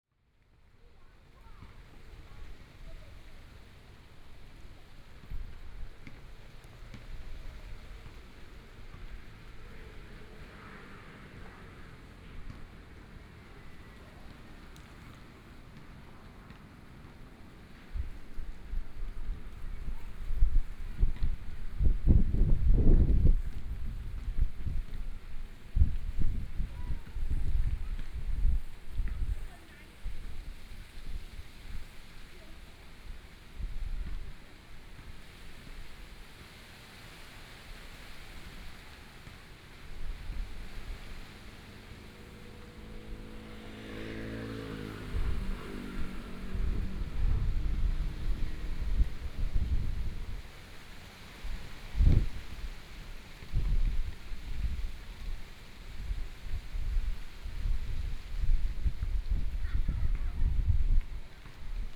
台東市台東運動公園 - the wind moving the leaves
The sound of the wind moving the leaves, Playing basketball voice, Students are playing basketball, Traffic Sound, Zoom H6 M/S